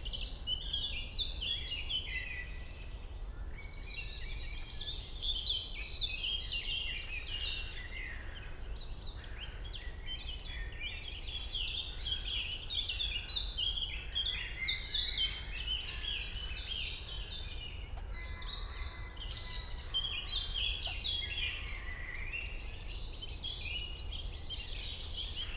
Königsheide, Berlin - spring morning ambience
Königsheide, Berlin, spring morning forest ambience at the pond, distant city sounds
(Sony PCM D50 DPA4060)
Berlin, Germany